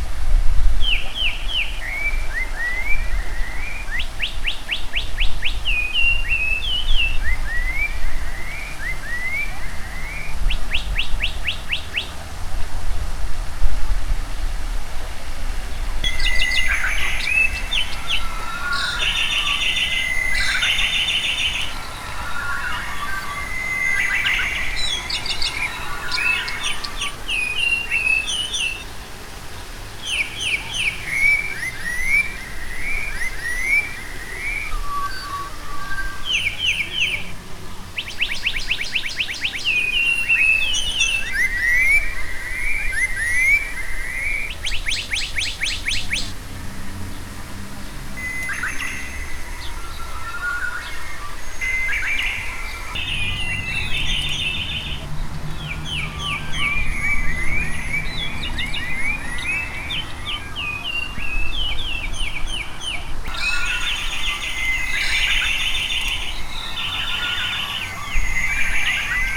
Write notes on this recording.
BIRDS - sound installation by Ludomir Franczak during Survival 2011